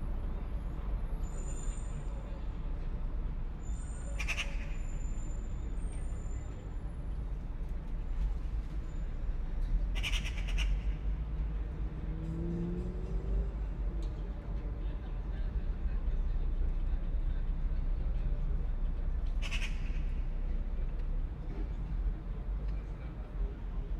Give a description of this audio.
Narrow (18cm) AB stereo recording. Sennheiser MKH 8020, Sound Devices MixPre6 II